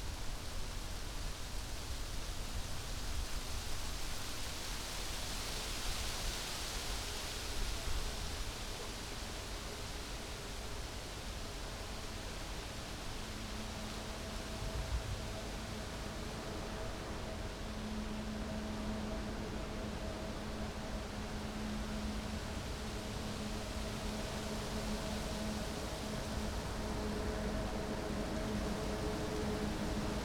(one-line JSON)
{"title": "UAM Campus Morasko - poplar trees", "date": "2015-06-14 12:41:00", "description": "intense swoosh of a few poplar trees.", "latitude": "52.47", "longitude": "16.92", "altitude": "94", "timezone": "Europe/Warsaw"}